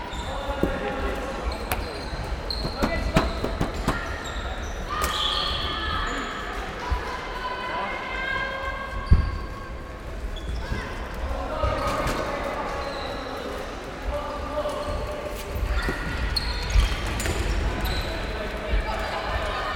SIbeliova Praha, Česká republika - Floorball Match
321 teams from 14 countries take part in the 10th International Youth Floorball Tournament, the Prague Games 2013. The youth match at the Tatran Sport Center in Stresovice.